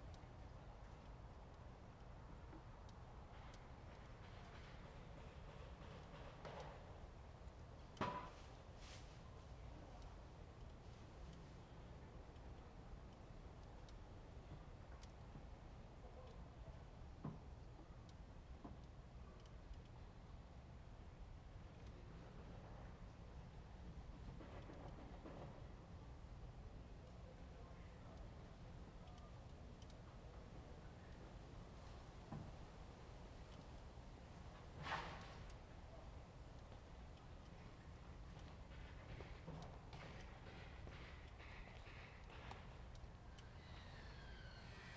Lieyu Township, Kinmen County - Small village
Small village, In the vicinity of the temple
Zoom H2n MS +XY